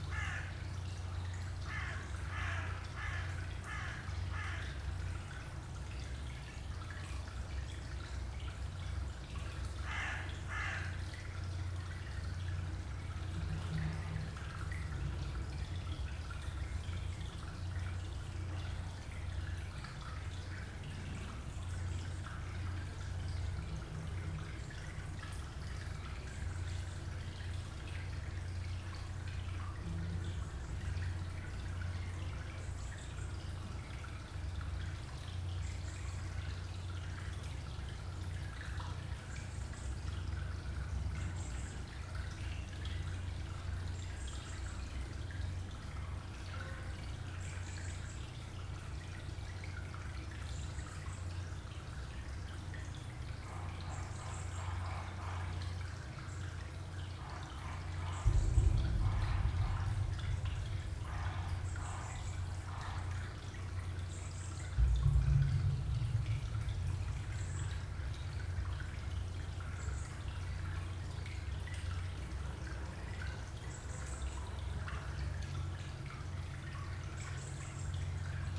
water evacuation tunel, resonance concrete structure